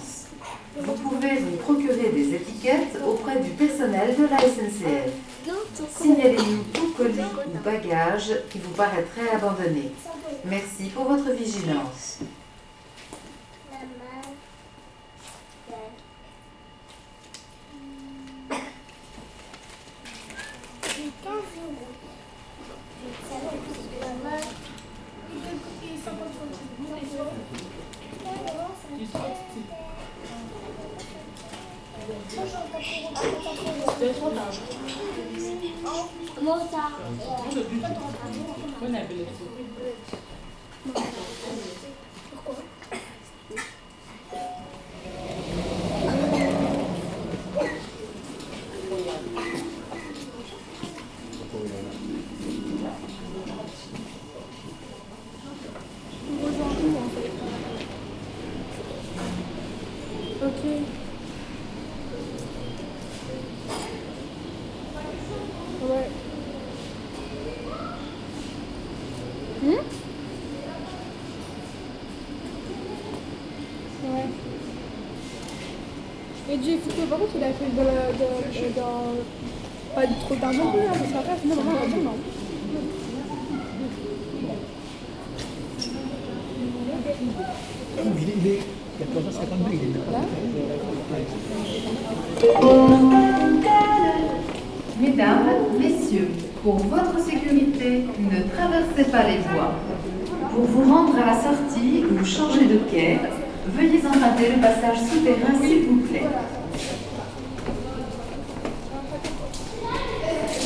Chartres, France - Chartres station
Chartres station - Several trains make their take in and off on the adjacent platforms 2B and 6 (do not try to understand). I recorded 3 trains. First, a train incoming Chartres station, terminus. After, it's a diesel engine connecting Brou and Courtalain villages. The locomotive makes a terrible noise. Then a conventional TER train ensures the connection between Chartres and Paris-Montparnasse stations. Along the platform, lively conversations take place.
0:00 - 3:00 - The quiet waiting room of the station.
3:00 - 4:10 - The main hall of the station.
4:10 - 5:30 - Incoming train from Le Mans city.
5:28 - PAPA !!!!!
7:30 - 10:45 - Outgoing train to Courtalain village.
10:45 to the end - Outgoing train to Paris.
16:38 - AYOU !
31 December 2018